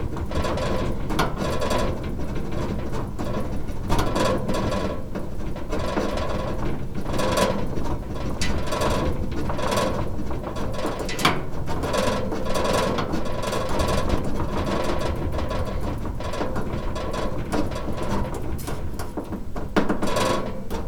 Recorded inside of a small, simple, tin, 20+ years old oven. The baking trays, grills and rods produce intricate rattle, clicks, knocks and shuffles. The was replaced a about a month later so it was the only and the last time it was used as a sound source, not a cooking machine. (sony d50)
Poznań, Poland, January 2018